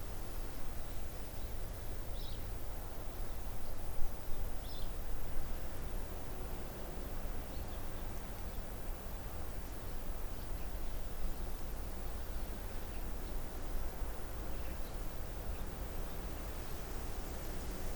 Garden at Sunnymeade, Four Crosses, Powys, Wales - Garden Ambience World Listening Day
Quiet background garden ambience with birdsong, wind in trees, light rain falling, cars on nearby road